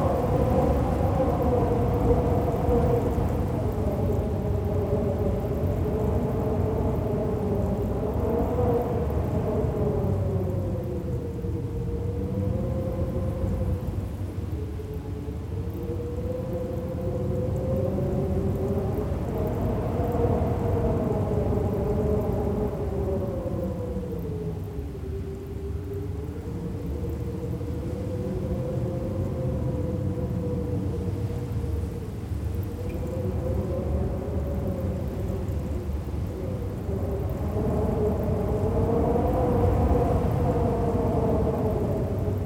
Tienen, Belgique - The wind in a nearly abandoned aircraft base
Recording of the wind in a nearly abandoned aircraft base. There's only a few landings during the Sunday. The other days everything is empty. In fact almost all the buildings are completely trashed. On the plains, there's a lot of wind today. The wind makes its way through a broken door. It's a cold sound, punctuated by slamming door and even a glass pane that breaks on the ground. Ouh ! Dangerous ! One hour recording is available on demand.